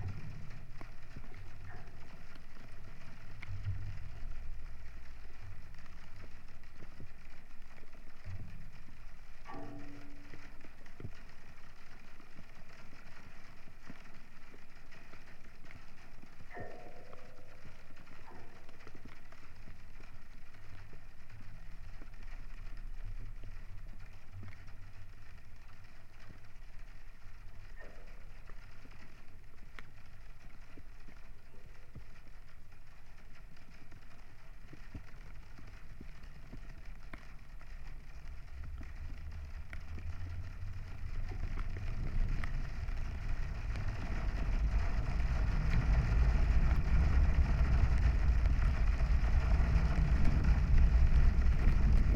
Wet, snowy day. A pair of contact microphones and geophone on aqua jump fence.